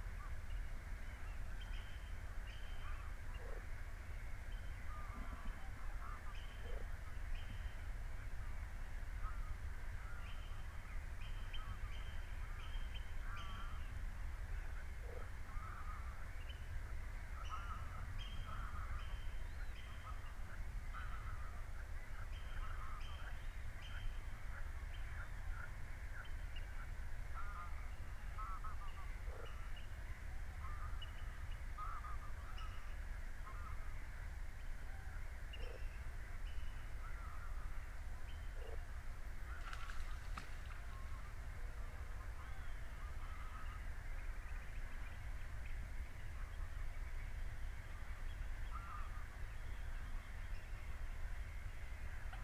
workum: suderseleane - the city, the country & me: birds, frogs, insects
birds of the nearby bird sanctuary, frogs, insects, me
the city, the county & me: june 12, 2014
Workum, The Netherlands